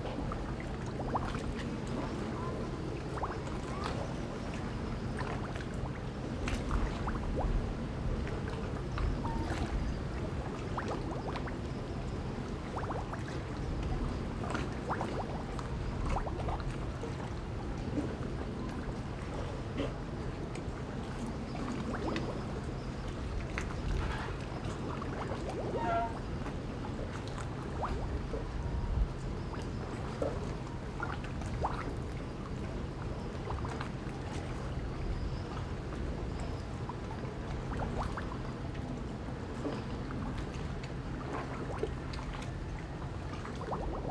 Magong City, Penghu County - In the dock
In the dock, Waves and tides
Zoom H6 +Rode NT4